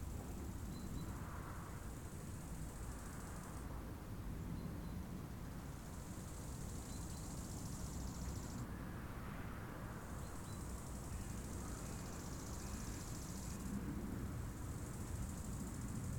Période de sécheresse l'herbe rase est comme du foin il reste quelques criquets, les bruits de la vallée en arrière plan, le clocher de Chindrieux sonne 18h passage d'un avion de tourisme.
France métropolitaine, France, 21 August